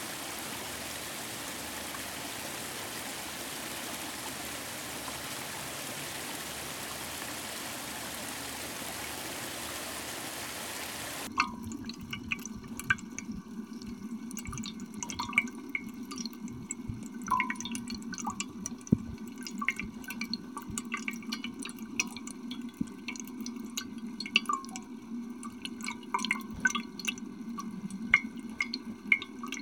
Lake Shore East Park West Water Garden
Recorded on Zomm H4N. Four sounds total; First is standing next to the Side Fountain. Second through fourth are recorded on the Zoom with a home made hydrophone. Second is of the water running over the metal trough. Third is inside the water trickling down. Fourth is the water falling on the rocks.